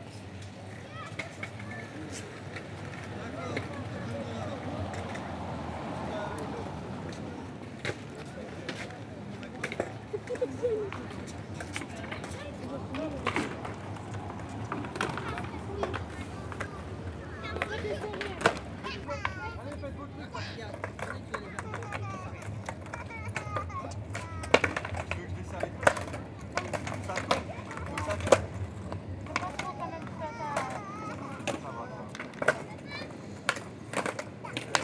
On the Brussels skatepark, young girls playing skateboards. On the beginning, a mother taking care to her children. After a class is beginning. During this recording, a junkie asked me for drugs !